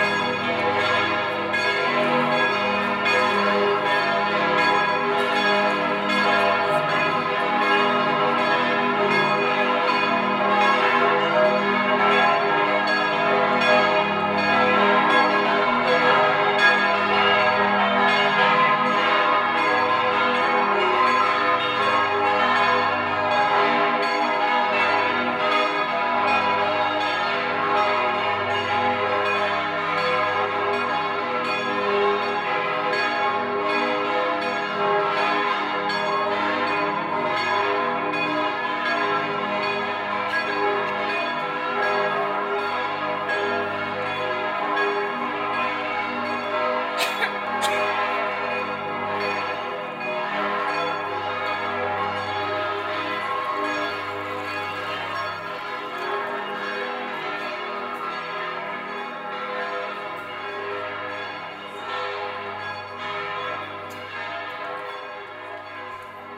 Aarau, Kirchplatz, Maienzug Schweiz - Maienzug Churchbells
After the Maienzug the bells of the church are tolling for a long time.
1 July 2016, 09:00